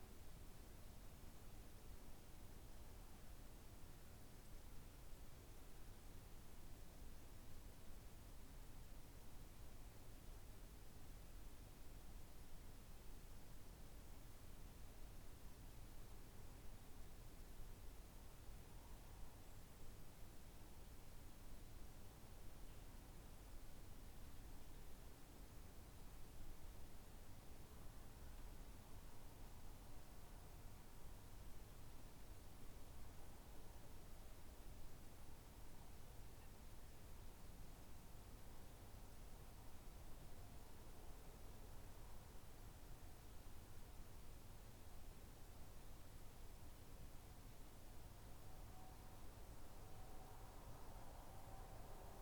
Sollefteå, Sverige - Birds at dawn
On the World Listening Day of 2012 - 18th july 2012. From a soundwalk in Sollefteå, Sweden. Birds at dawn in Sollefteå. WLD